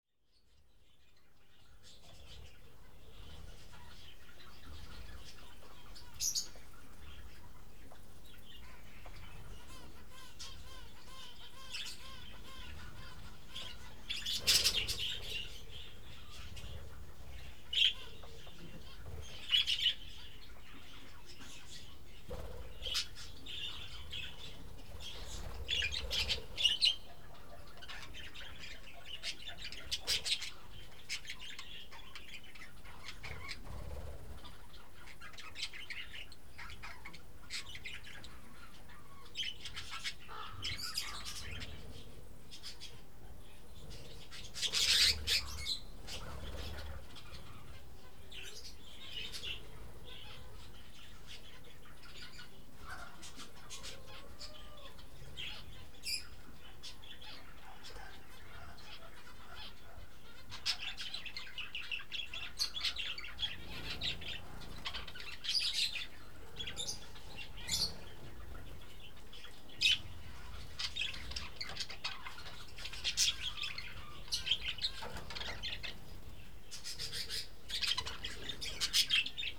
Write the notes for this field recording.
little zoo's inhabitants, parrots